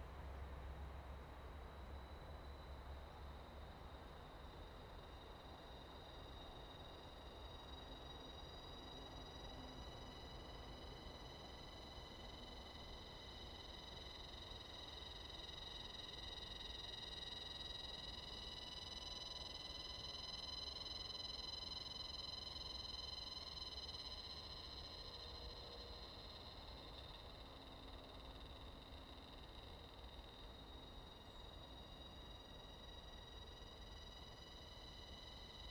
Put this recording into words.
In the woods, Sound of insects, Wind, Zoom H2n MS +XY